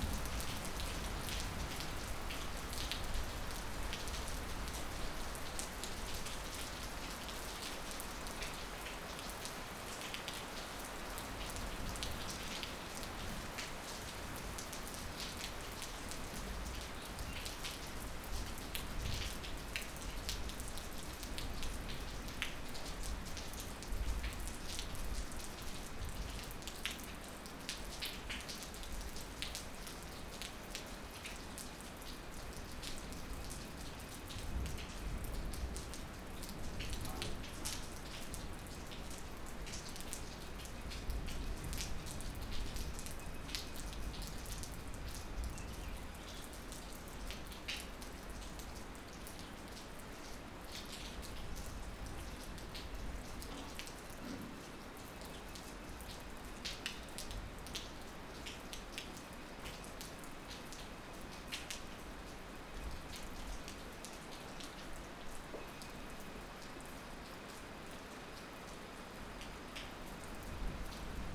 Rain at Gairal FRH, Corbett Tiger Reserve
From the March-April 2009 Corbett Tiger Reserve field trip. Light rain and thunder on the banks of the Ramganga river.